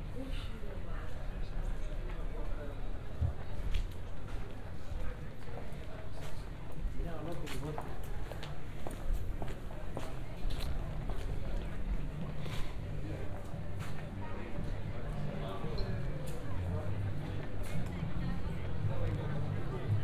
Athens, district near Acropolis - evening walk
(binaural) walking around narrow streets in a district at the foot of Acropolis. Passing by souvenir shops, restaurants and cafes. some empty, some buzzing with conversations. (sony d50 + luhd pm-01 bins)